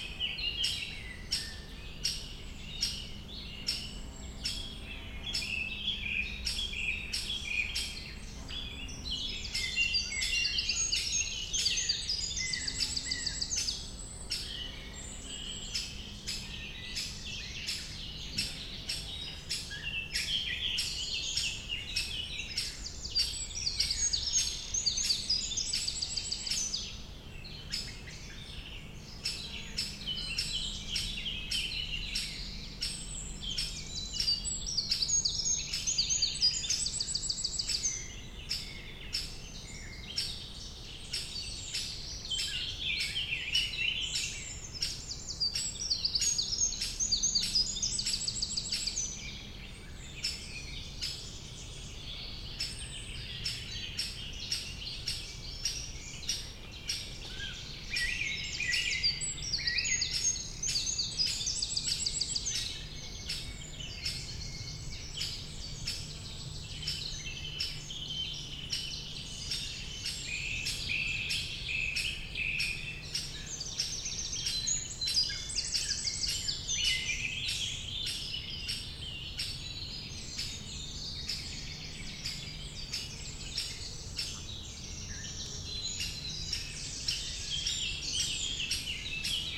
Forêt de Corsuet, Aix-les-bains France - Tempo pic.
Près d'un nid de pic concert d'oiseaux en forêt de Corsuet.